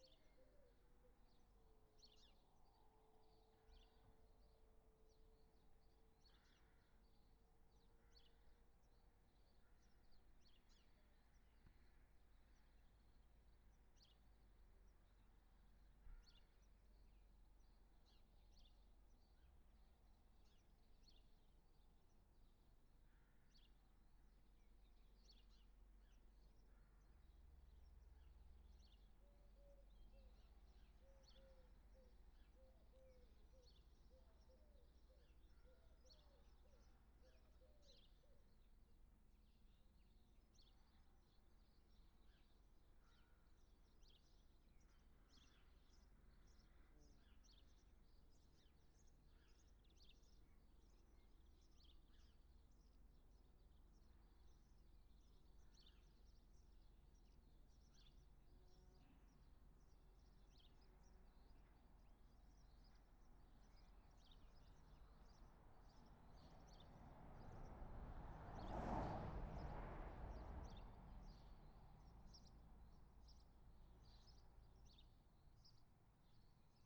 down to the doctors ... to get a prescription ... on the m'bike ... xlr sass on garage roof to zoom h5 ... always wanted to do this ... real time for there and back ...
Malton, UK